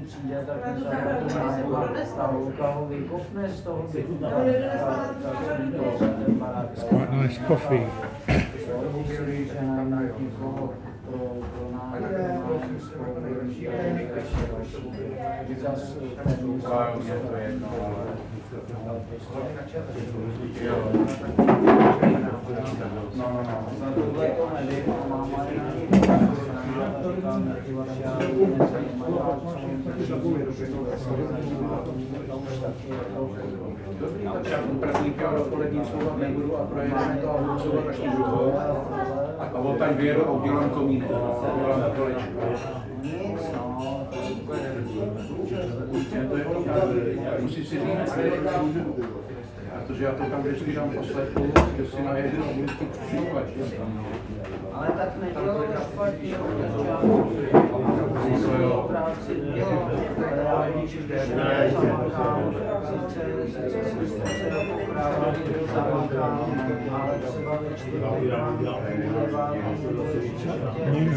Braník station cafe, Pikovická, Praha, Czechia - Braník station cafe
This cafe is one of the few station cafes in Prague still operating. it is very popular with local workers for lunch. We were just drinking coffee, but the food looked pretty good.